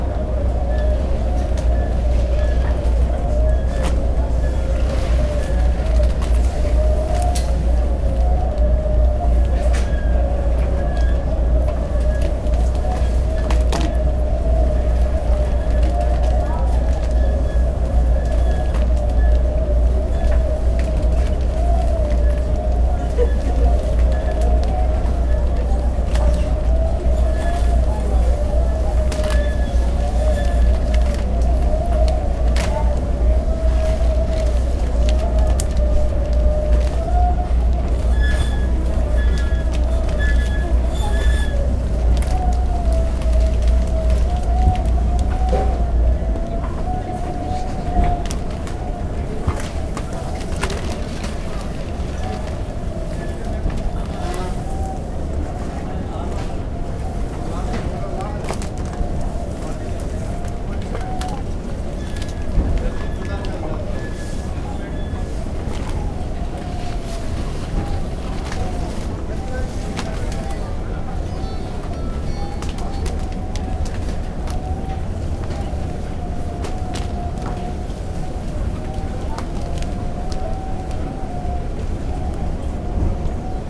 Düsseldorf, airport, luggage transportation belt - düsseldorf, airport, luggage transportation belt
in the arrival zone, a luggage transportation belt - microphoned closely - in the background passengers talking
soundmap nrw - social ambiences and topographic field recordings